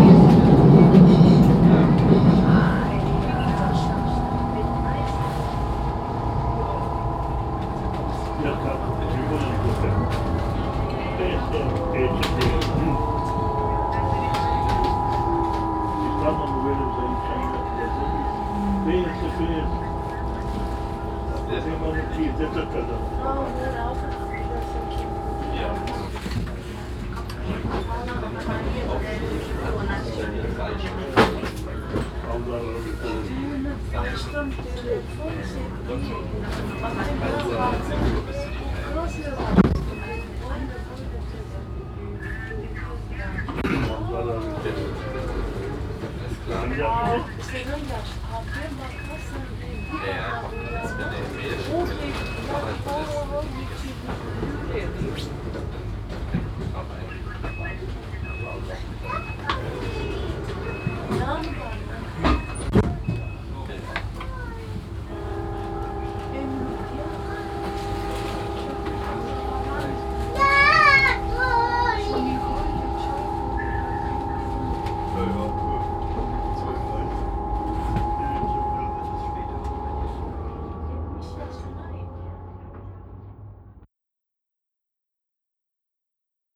Gallus, Frankfurt, Deutschland - frankfurt, inside s-bahn train
Inside a S-Bahn - train arriving at station Galluswarte. The sound of the moving train and the atmosphere inside. A child talking and train announcemens.
soundmap d - social ambiences and topographic field recordings